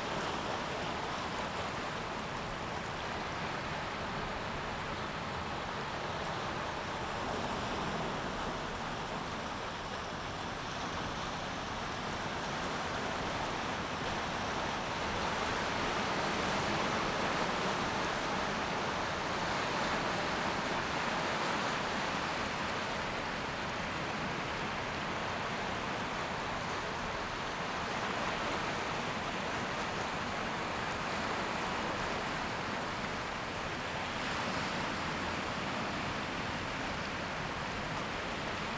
大屯溪, 淡水區, New Taipei City - At the river to the sea
At the river to the sea, the waves
Zoom H2n MS+H6 XY
15 April 2016, ~09:00